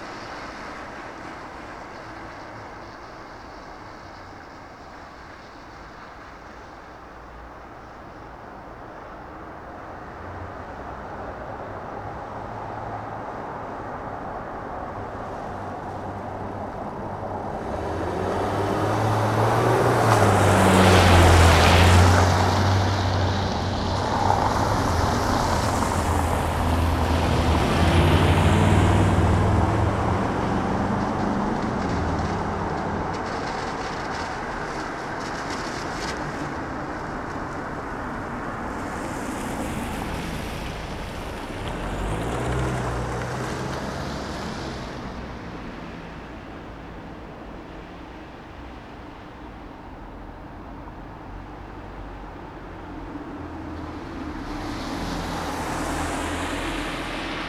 Berlin: Vermessungspunkt Maybachufer / Bürknerstraße - Klangvermessung Kreuzkölln ::: 28.02.2012 ::: 02:06
Berlin, Germany